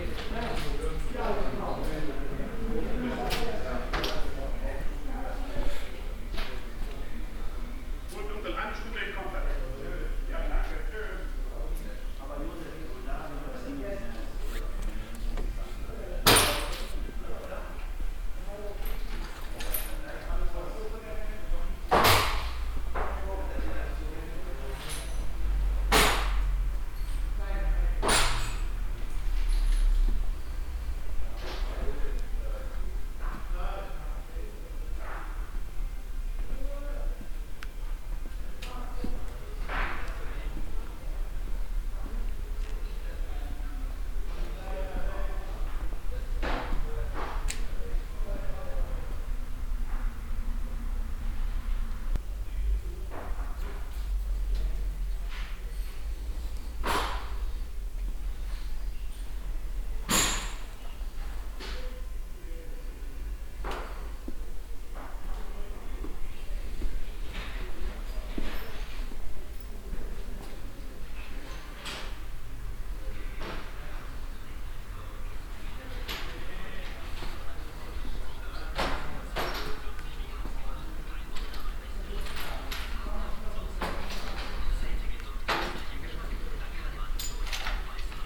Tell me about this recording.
a second hand factory hall with used furnitures and kitchen elements in all size - metal pieces are sorted by a worker, soundmap d - social ambiences and topographic field recordings